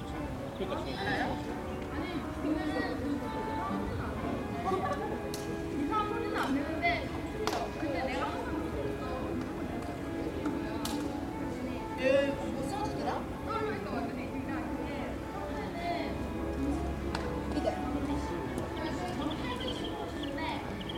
Music University students courtyard, Vienna
ambience in the courtyard of the Music University in Vienna
Vienna, Austria, 4 June 2011, 15:30